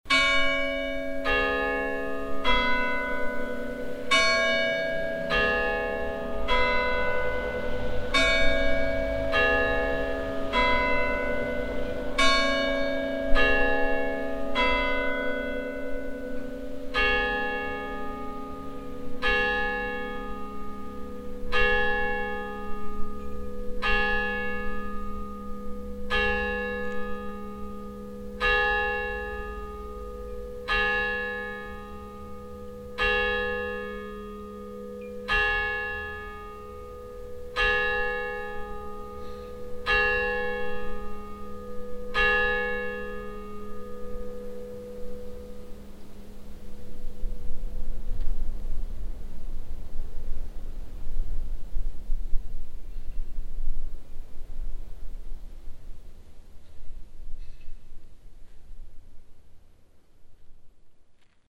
hupperdange, church, bells
The church bells of Hupperdange. First the 12:00 o'clock hour bell.
Recorded on a windy summer day in the church garden. At the end a car passing by on the nearby road.
Hupperdange, Kirche, Glocken
Die Kirchenglocken von Hupperdange. Zunächst die 12-Uhr-Glocke. Aufgenommen an einem windigen Sommertag im Kirchengarten. Am Ende fährt ein Auto auf der nahen Straße vorbei.
Hupperdange, église, cloches
Les cloches de l’église d’Hupperdange. Tout d’abord le carillon de 12h00. Enregistré pendant un jour d’été venteux dans le jardin de l’église. A la fin, une voiture qui passe sur une route proche.